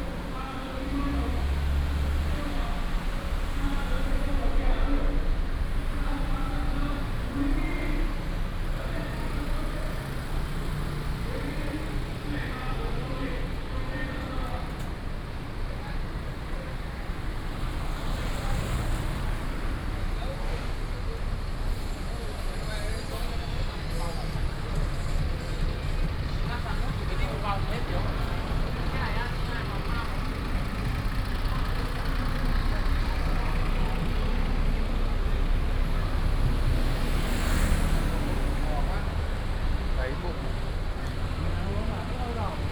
桃園區延平路, Taoyuan City - Southeast Asia Shop Street
Walking in the street, Southeast Asia Shop Street, Binaural recordings, Sony PCM D100+ Soundman OKM II
Taoyuan District, Taoyuan City, Taiwan